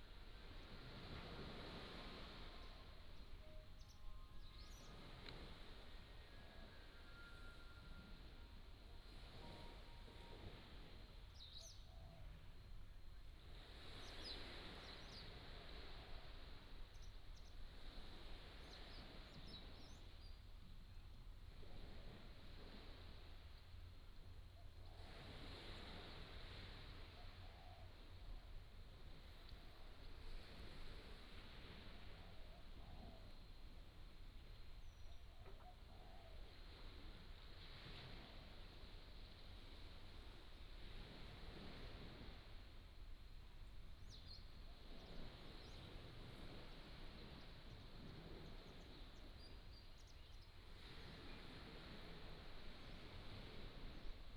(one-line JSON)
{"title": "馬祖港, Nangan Township - In the beach", "date": "2014-10-15 08:24:00", "description": "Sound of the waves, In the beach", "latitude": "26.16", "longitude": "119.92", "altitude": "10", "timezone": "Asia/Taipei"}